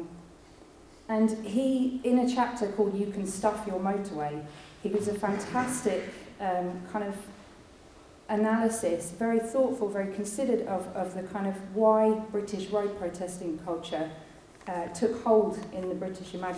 UCL, Garraun, Co. Clare, Ireland - Talking about Roads at the Urban Soundscapes & Critical Citizenship conference, March 2014
You can hear all the banter and talking and setting up at the start, but at about 6 minutes in, there is the joint presentation given by myself and Paul Whitty at the Urban Soundscapes & Critical Citizenship conference, March 2014; we are talking about listening to the street, and how it relates to different sonic practices. Many sounds discussed in the presentation are elsewhere on aporee...
28 March 2014